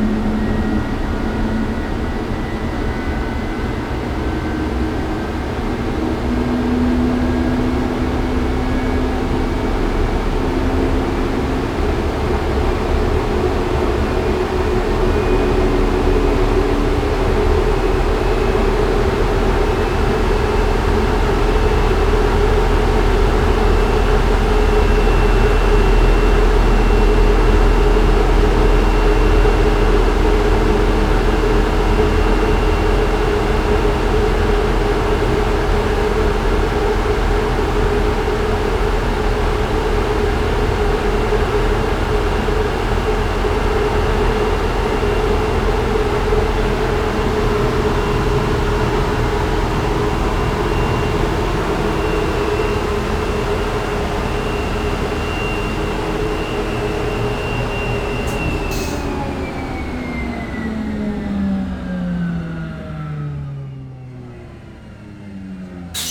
The train starts, Train Factory
Zoom H6 MS +Rode NT4 ( Railway Factory 20140806-14)